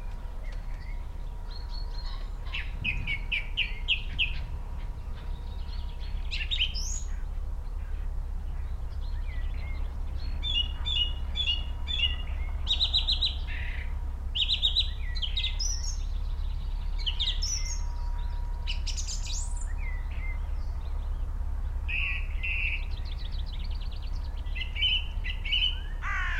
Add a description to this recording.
Song thrush soundscape ... XLR mics in a SASS to Zoom H5 … starts with blackbird … song thrush commences at four minutes … ish … crows at 27 mins … bird call … song … tawny owl … wood pigeon … skylark … pheasant … red-legged partridge … blackbird … robin … crow … wren … dunnock … some background noise ...